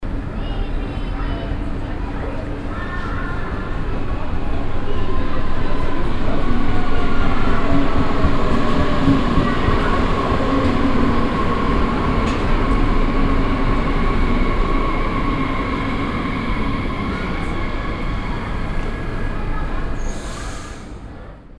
essen, main station, track 9
Am HBF Gleis 9. Ein Zug fährt heran und brenst langsam ab.
A train driving in the station and stopping slowly.
Projekt - Stadtklang//: Hörorte - topographic field recordings and social ambiences
Essen, Germany, 9 June 2011, ~22:00